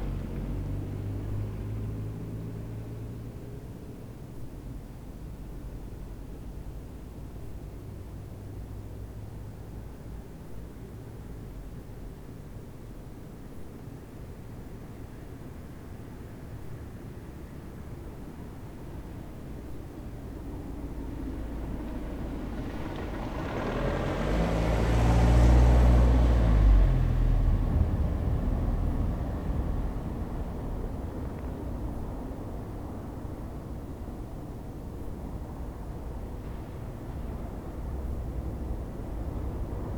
Berlin: Vermessungspunkt Friedelstraße / Maybachufer - Klangvermessung Kreuzkölln ::: 16.12.2010 ::: 01:27
Berlin, Germany, 16 December 2010